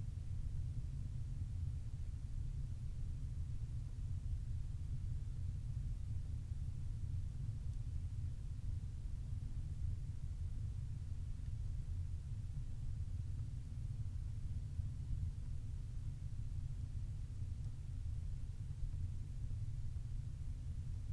Nesbister böd, Whiteness, Shetland, UK - The low drone of the stove inside the böd
The böd at Nesbister is in a truly beautiful situation, a fifteen minute walk from where you can dump a car, perched at the edge of the water, at the end of a small, rocky peninsula. There is a chemical toilet and a cold tap there, and it's an old fishing hut. No electricity. People who have stayed there in the past have adorned the ledge of the small window with great beach finds; bones, shells, pretty stones, pieces of glass worn smooth by the sea, and driftwood. There is a small stove which you can burn peat in, and I set the fire up in this before heading down the bay to collect more driftwood kindling for the next person to stay after me. I set up EDIROL R-09 to document the wonderful low drone of a small peat-burning stove in an off-grid cottage with thick stone walls, thinking that this kind of domestic soundscape would have been the background for many nights of knitting in Shetland in the past.